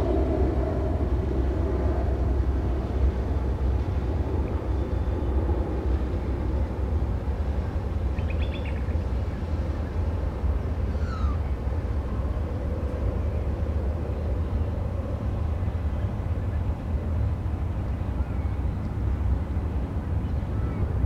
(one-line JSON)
{"title": "Balls Head Reserve, Balls Head Drive, Waverton NSW, Australia - Balls head lookout - morning on the harbour", "date": "2015-07-10 09:30:00", "description": "Recorded with 4060s hanging from the guard rail at the lookout point of this reserve.. the harbour and city are a bustling backdrop to this beautiful location - DPA 4060s, custom preamps, H4n", "latitude": "-33.85", "longitude": "151.19", "altitude": "15", "timezone": "Australia/Sydney"}